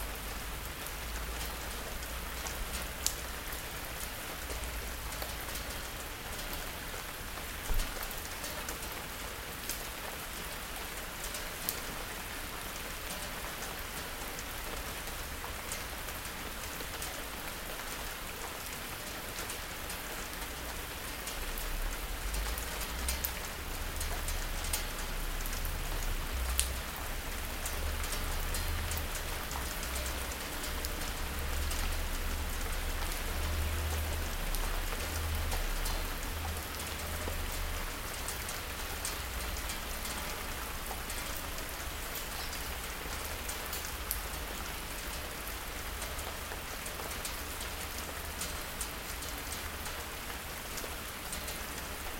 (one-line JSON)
{"title": "morning rain - morning rain, st. gallen", "description": "light rain on leaves, terrace, metal table. recorded aug 15th, 2008.", "latitude": "47.43", "longitude": "9.40", "altitude": "702", "timezone": "GMT+1"}